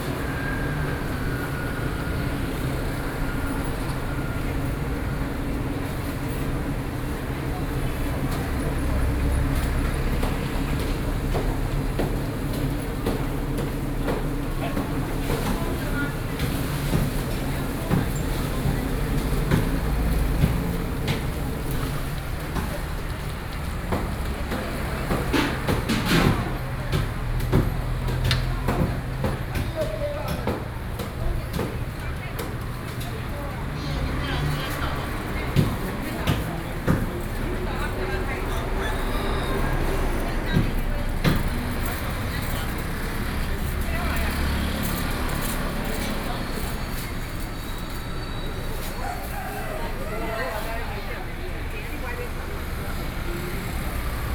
Xingzheng St., Xindian Dist. - Traditional markets
Xindian District, New Taipei City, Taiwan, 7 November 2012